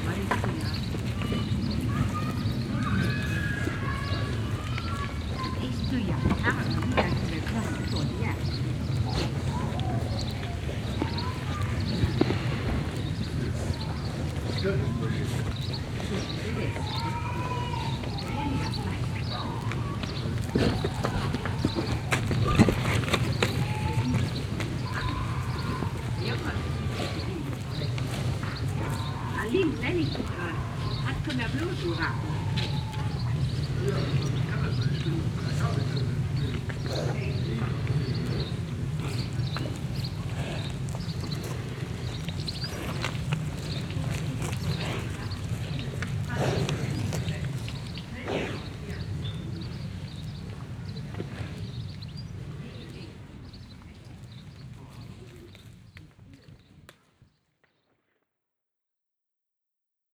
Huldange, Luxemburg - Huldange, bull meadow at street
An der Straße eine Wiese mit mehreren Stieren. Ein Mann fegt im Hinterhof, Kinder spielen an der Straße gegenüber. Der schnaubende Atem eines Stieres am Zaun. Im Hintergrund ein Gespräch.
At the street a meadow with a group of bulls. A man sweeps the floor of his backyard. Children play across the street. The breath of a bull at the fence .In the background a talk.